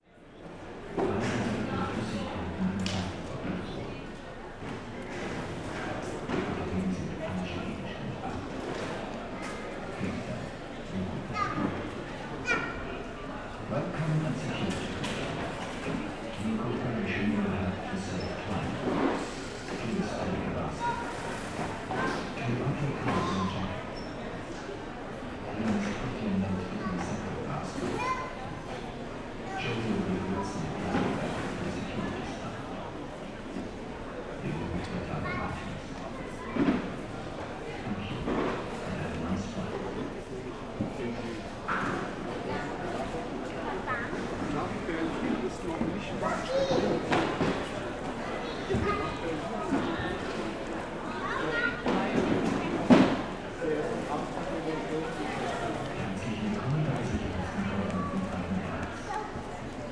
{"title": "graz airport - at the security check", "date": "2012-06-03 17:50:00", "description": "before entering the security check at Graz airport", "latitude": "46.99", "longitude": "15.44", "altitude": "335", "timezone": "Europe/Vienna"}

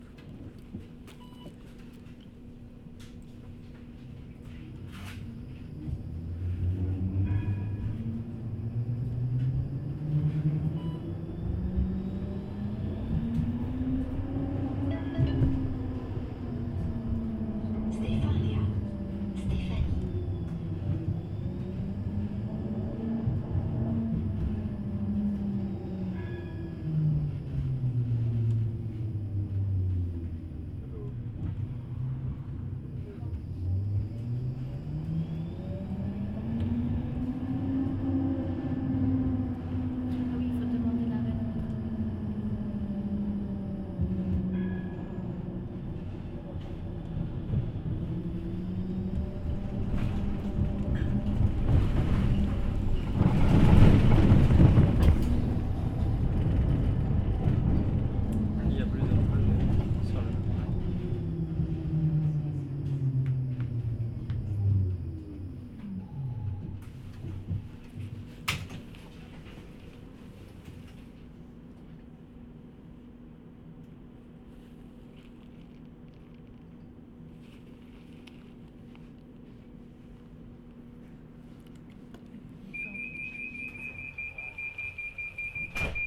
{"title": "Bruxelles, Belgique - Tramway Engine", "date": "2015-12-23 12:13:00", "description": "Sound of the Brussels tramway engine.\n/zoom h4n intern xy mic", "latitude": "50.83", "longitude": "4.36", "altitude": "78", "timezone": "GMT+1"}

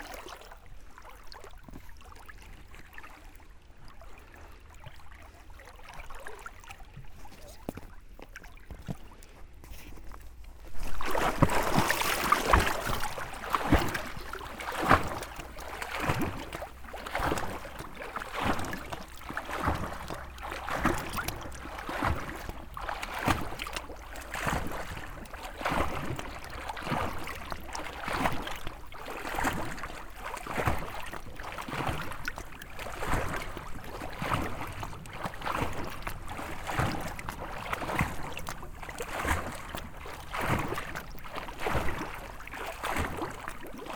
{"title": "Polisot, France - Swimming", "date": "2017-08-01 17:15:00", "description": "The Seine river is flowing from the Burgundy area to the Normandy area. In this part of the river, ther's no footpath to walk along the river. So we made the choice to discover the river swimming. As we didn't have any choice, we made 139 km swimming like that, during a little more than one week. It was quite long but very beautiful.", "latitude": "48.08", "longitude": "4.37", "altitude": "159", "timezone": "Europe/Paris"}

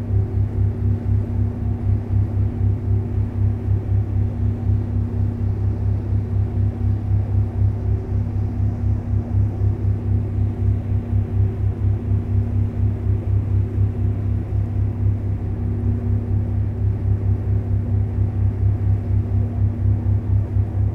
{"title": "Amsterdam, Nederlands - Central station Ferry", "date": "2019-03-28 16:15:00", "description": "Het Ij, Veer centraal station. Crossing the river using the ferry.", "latitude": "52.38", "longitude": "4.90", "altitude": "1", "timezone": "Europe/Amsterdam"}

